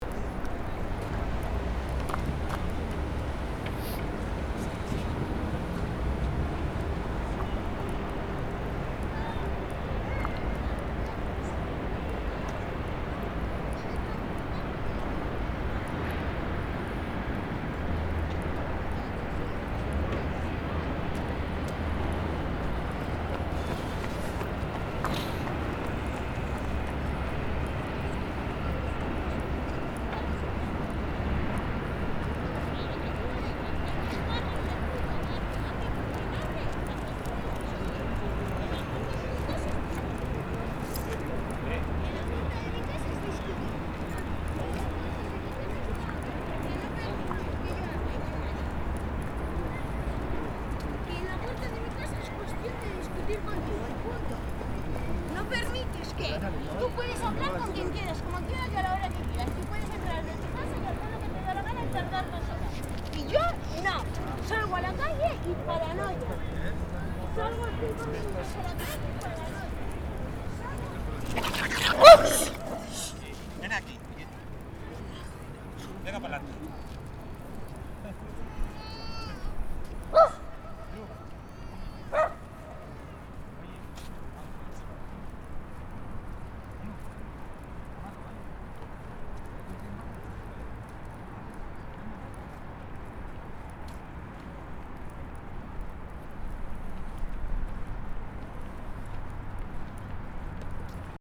Guggenheim Museum front stairs.
people arguing, discussing dogs too ... and also people walking or running.
Recorded ZOOM H4N 25-01-2010 18:45
España, European Union, 26 January 2010, 23:48